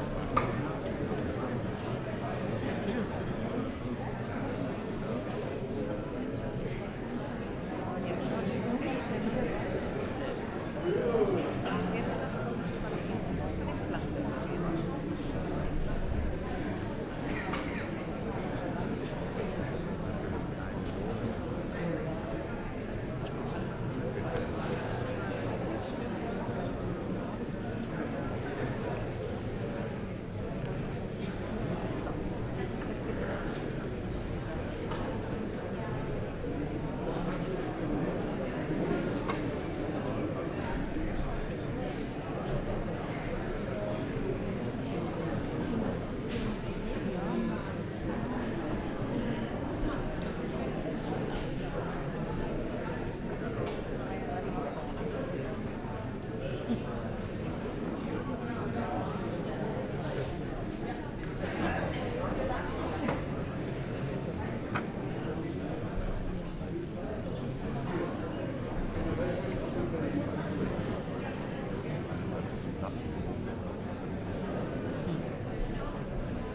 Himmelfahrtlirche, Gustav-Meyer-Allee, Berlin - before the concert
audience, murmur of voices before the concert, Himmelfahrtskirche Humboldthain
(Sony PCM D50, Primo EM172)
Berlin, Germany, 30 March 2019, 20:00